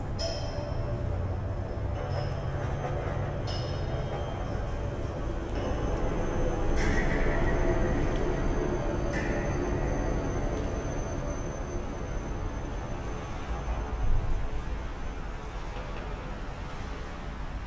(Tunnel, Pipe structure, Monday, binaurals)